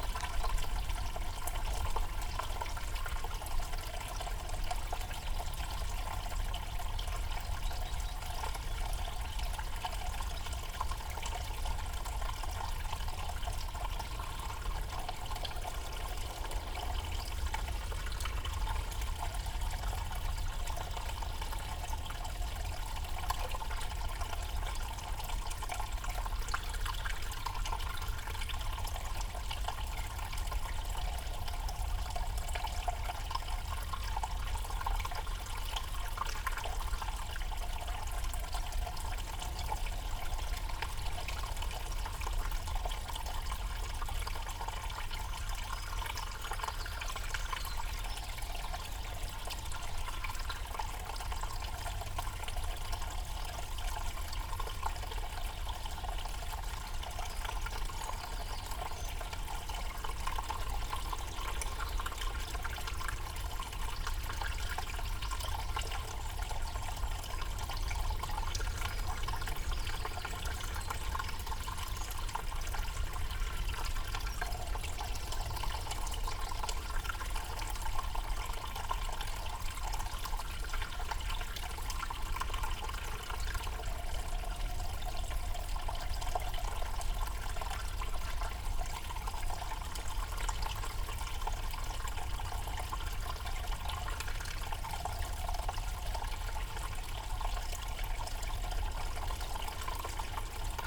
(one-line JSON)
{"title": "Isle of Islay, UK - culvert under the road ...", "date": "2018-05-24 09:20:00", "description": "Culvert under the road ... open lavaliers dangled down at one end of a culvert ... bird song ... wren ...", "latitude": "55.83", "longitude": "-6.41", "altitude": "21", "timezone": "Europe/London"}